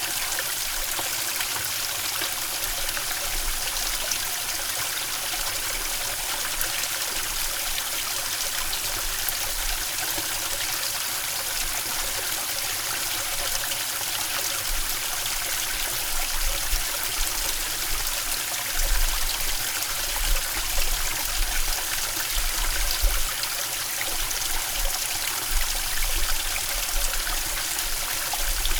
Yùshǐ Road, Wugu District, New Taipei City - Water
3 July 2012, ~17:00